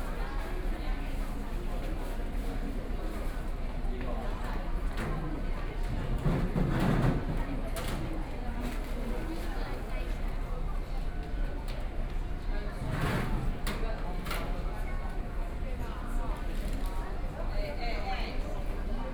Ximen Station, Taipei - soundwalk
Walk from the station entrance into the station via the underpass, Waiting at the train station platform, Binaural recordings, Sony PCM D50 + Soundman OKM II
Taipei City, Taiwan, October 2013